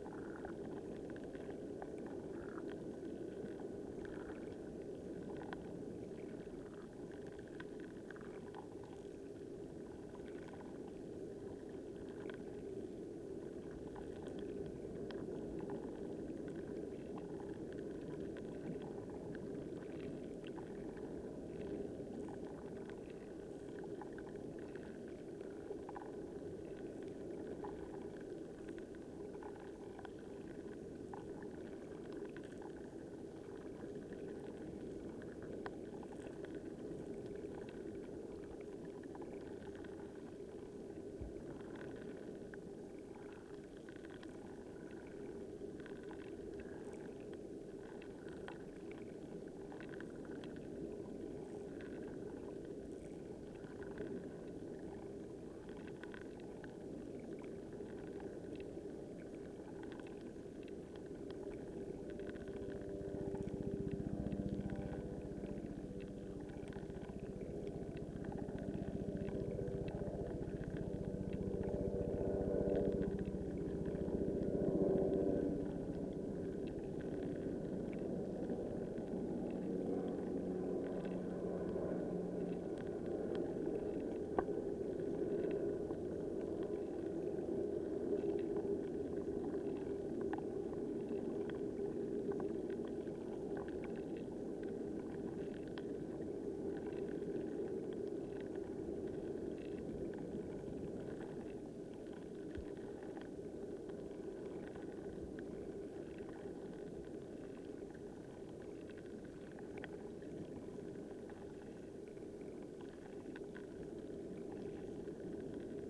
Royal National Park, NSW, Australia - (Spring) Rock Pool Near Little Marley Beach
Periwinkles and other creatures making delicate, quiet sounds in this rockpool, the roar of the ocean can be heard in the background. About 90 seconds in you hear a helicopter fly over. Not even the creatures in the rock pool are immune to the dense air traffic of the Royal National Park.
Two JrF hydrophones (d-series) into a Tascam DR-680.